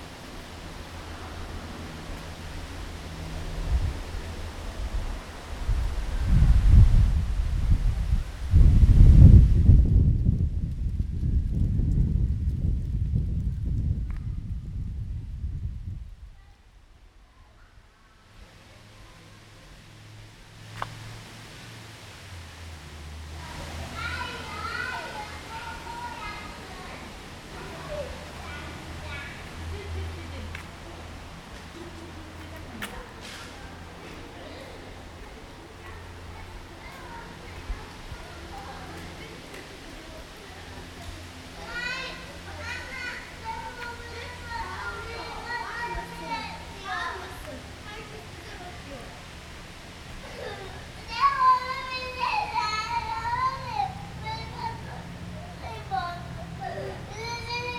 Kleiststrasse Mannheim - Kasimir Malewitsch eight red rectangles
before the rain
Mannheim, Germany, 30 July 2017